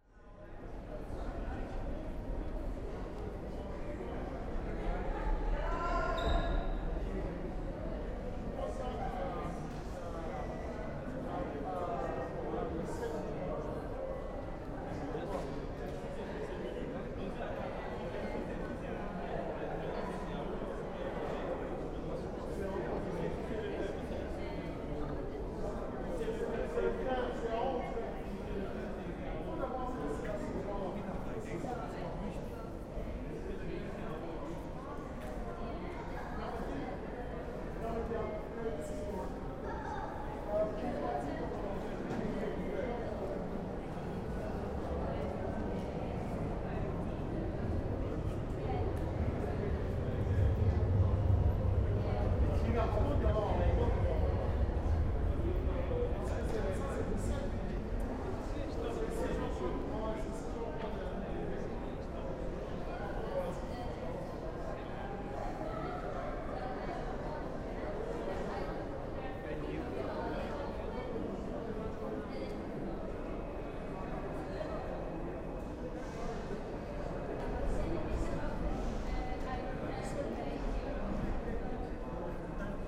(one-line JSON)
{"title": "City of Brussels, Belgium - Metro train approaching", "date": "2013-03-23 21:03:00", "description": "Waiting in the station for the Metro train to arrive, and then the train arriving. You can hear the nice sounds of people in the space, and the acoustics of the station, and the sounds of trains arriving on more distant platforms. The recording was made with on-board EDIROL R09 microphones.", "latitude": "50.84", "longitude": "4.36", "altitude": "79", "timezone": "Europe/Brussels"}